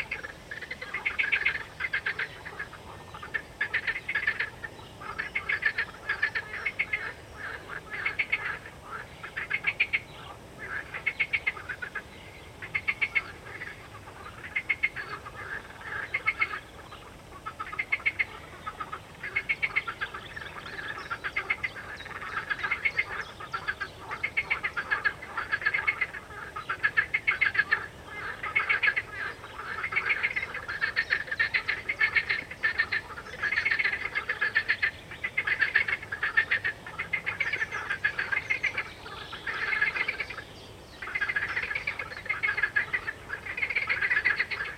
Chemin des Ronferons, Merville-Franceville-Plage, France - Birds and frogs
Birds and frogs during the covid-19 pandemic, Zoom H3VR, Binaural.
Normandie, France métropolitaine, France, April 2020